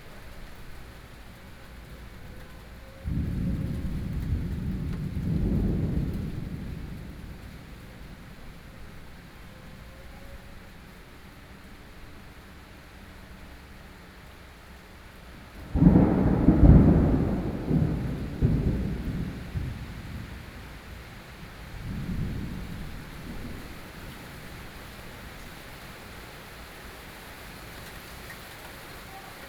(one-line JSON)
{"title": "Beitou - Thunderstorm", "date": "2013-06-04 12:25:00", "description": "Thunderstorm, Sony PCM D50 + Soundman OKM II", "latitude": "25.14", "longitude": "121.49", "altitude": "23", "timezone": "Asia/Taipei"}